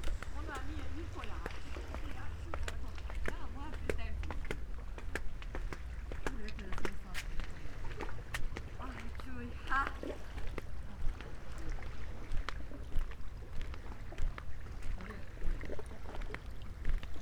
Novigrad, Croatia - and again, and so on, at dawn ...
the same circular path with bicycle, this year prolonged all the way to the fisherman boats harbour ... seagulls, waves, swimmers at dawn